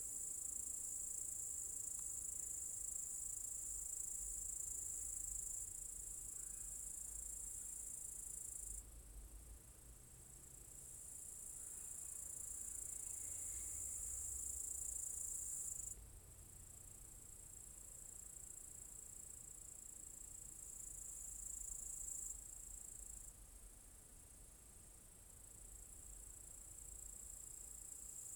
Vilkijos apylinkių seniūnija, Litouwen - Cicadas
Cicadas in a apple tree orchard.
Lithuania, 23 August 2015